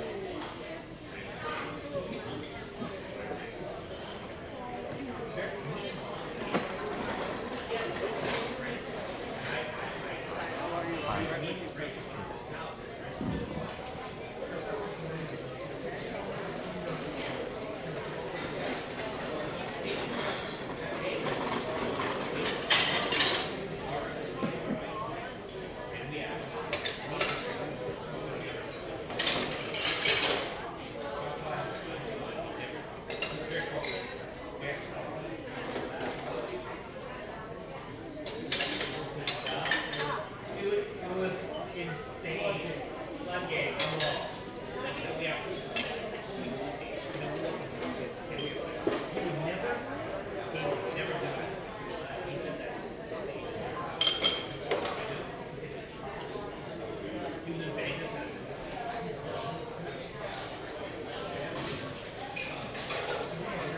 Central LA, Los Angeles, Kalifornien, USA - canter's deli
canter's deli, late lunch time. customers, cutlery and dishes..